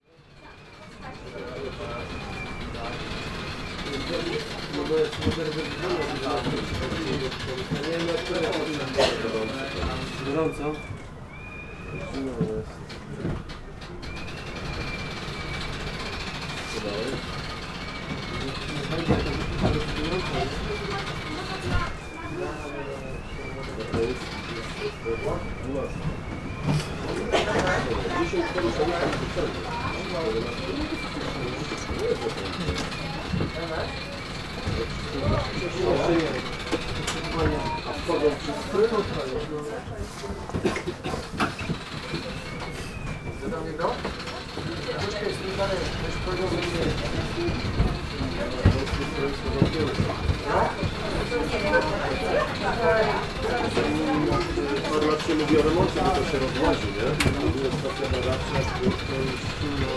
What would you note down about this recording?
entrance room to the cafe on the top of the mountain. a few tourists talking. wind penetrating through chinks in the wooden walls. coin pressing machine clatter. (sony d50)